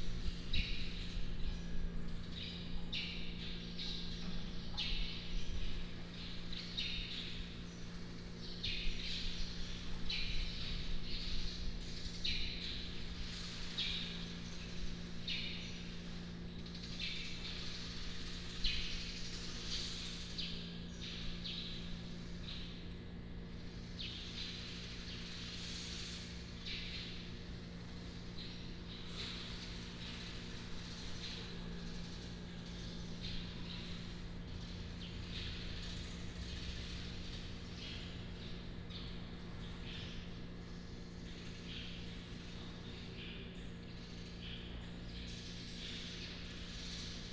太麻里火車站, Taitung County - In the station hall
In the station hall, birds sound, Footsteps